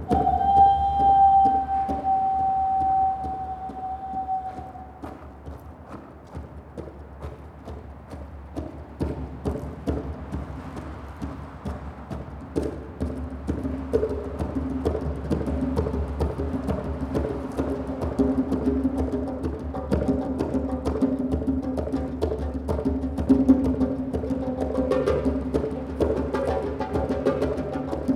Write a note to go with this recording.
Instruments: Boucarabou (Senegal), Darbuka (Moroccan), Ocarina (Ecuador). Recorded on DR-40